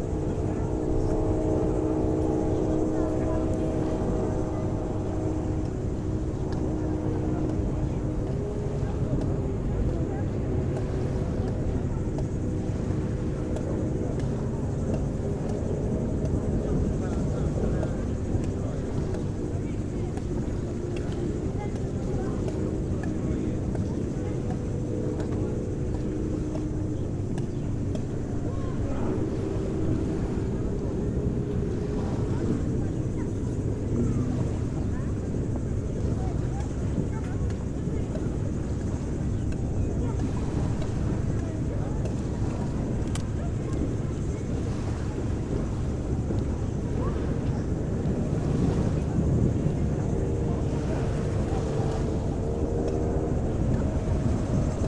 Nydri, Lefkada - Nydri Beach Atmosphere
Beach sounds Nydri, Lefkada, Greece.